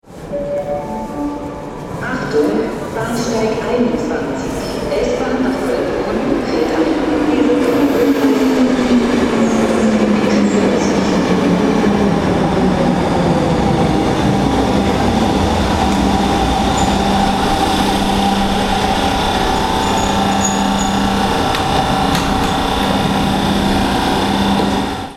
wien x. - wien süd s-bahn
wien süd s-bahn
30 November 2009